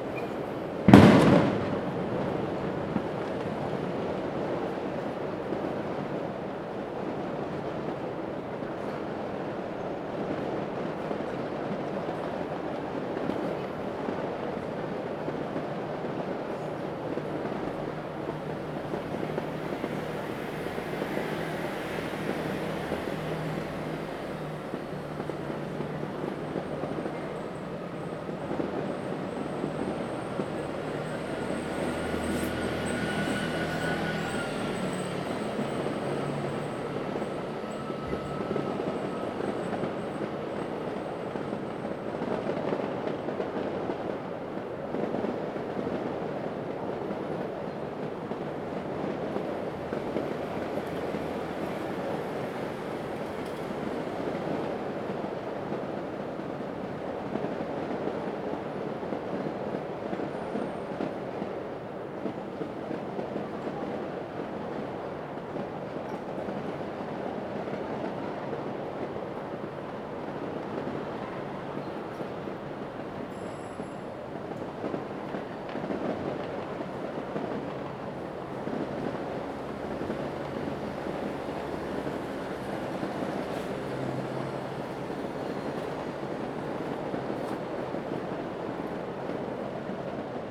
Firecrackers, Firework, Traffic Sound
Zoom H2n MS+XY
New Taipei City, Taiwan, 3 April 2016, ~22:00